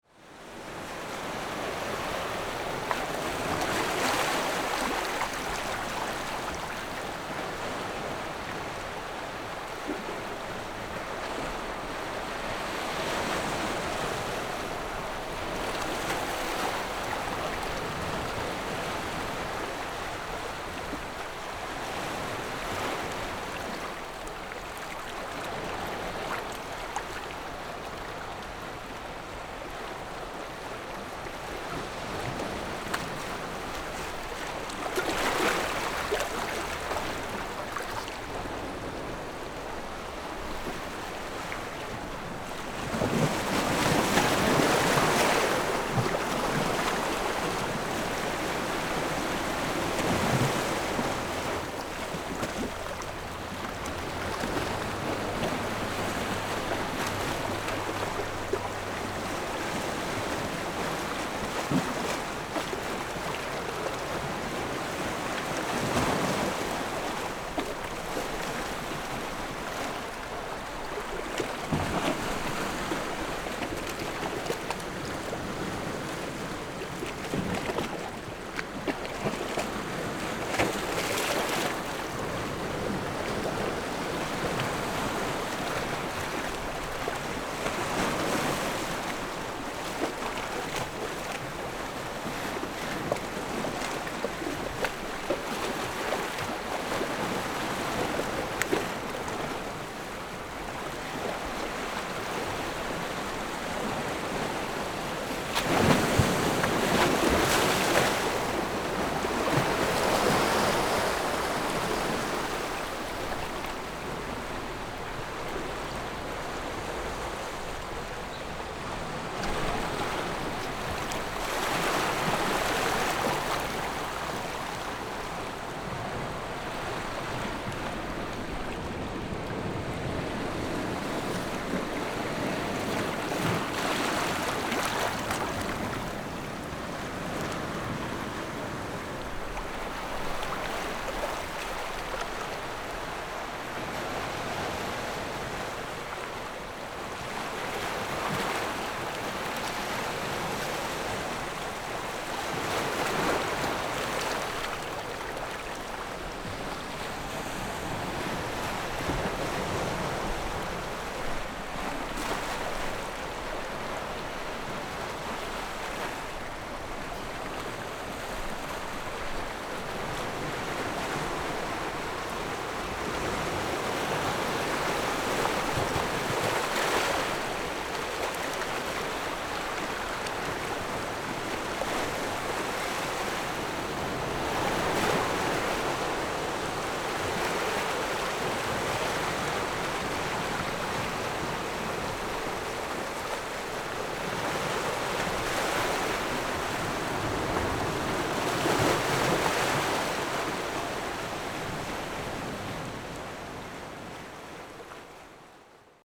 Waves and tides, Sound of the waves
Zoom H6+ Rode NT4